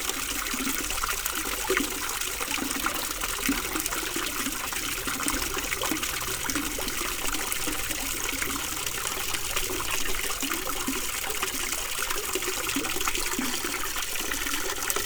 A small fountain in the center of the Hévillers village.